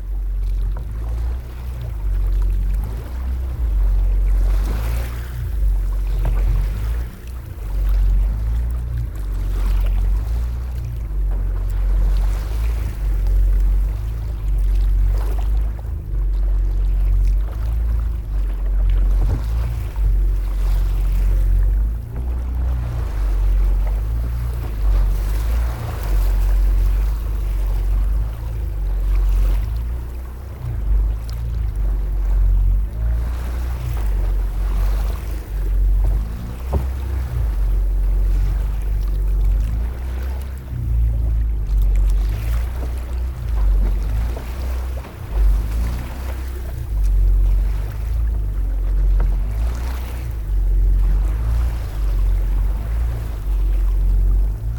Curonian lagoon. Historical wooden boat strugling with wind. Recorded with tiny Instamic recorder.